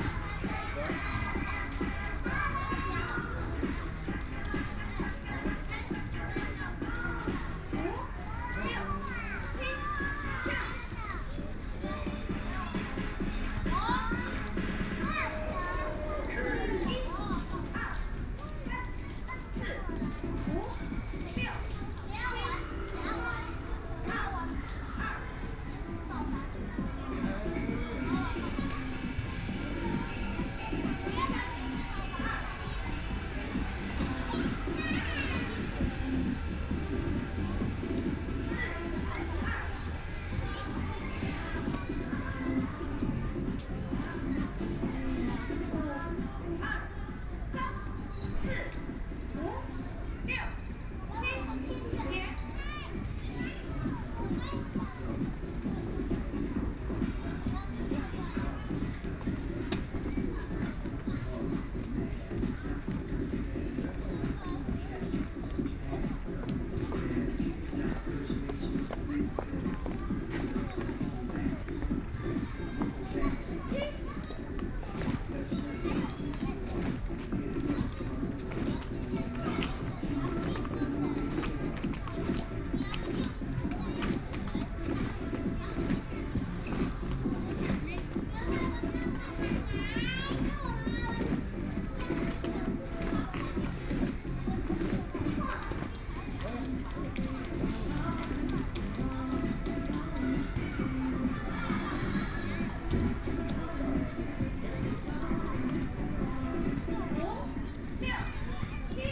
碧湖公園, Neihu District - The park at night
Many women are doing sports
Binaural recordings
19 March 2014, 20:20